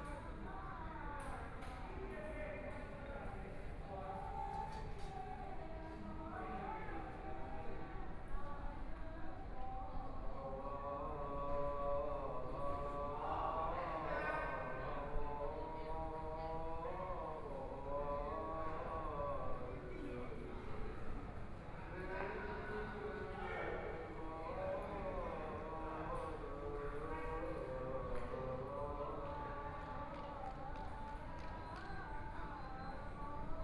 台東縣體育場, Taiwan - Outside the stadium
Outside the stadium, Buddhist Puja chanting voice, A group of elderly people are playing ball hammer, Binaural recordings, Zoom H4n+ Soundman OKM II